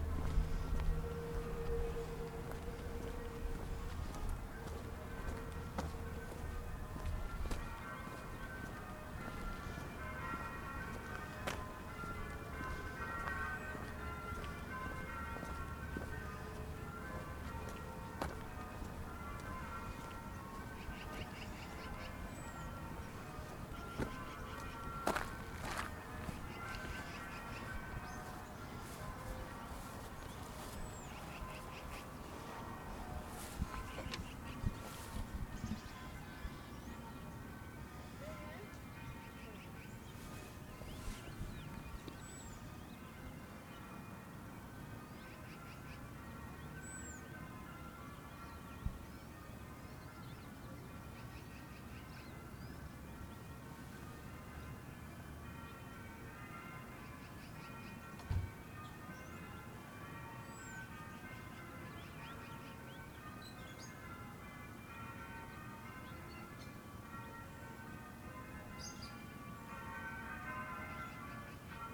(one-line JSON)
{"title": "WLD: Calgary, Christ Church Bells", "date": "2011-07-17 10:00:00", "description": "World Listening Day, Christ Church, Calgary, Handbells, bells, soundscape", "latitude": "51.02", "longitude": "-114.07", "altitude": "1077", "timezone": "America/Edmonton"}